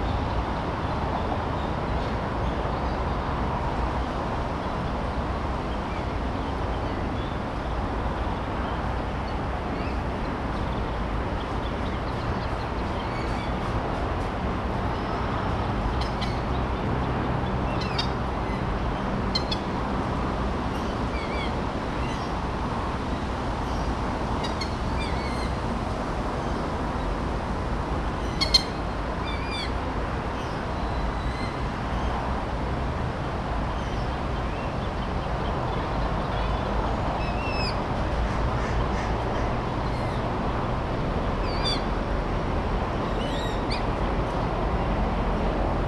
Dubelohstraße, Paderborn, Deutschland - Fischteiche ueber Wasser
Mayor Franz-Georg
when you imagined
this place
over a hundred years ago
as the favourite walk
of the people
and the adornment
of the town
did you forehear
the noise of the cars
and the trains
even deep down
in the lake?
What are the swans
the geese and the ducks
dreaming about?
What were you doing
up there in the elm
and what did you hear
when you fell?
Can you hear me?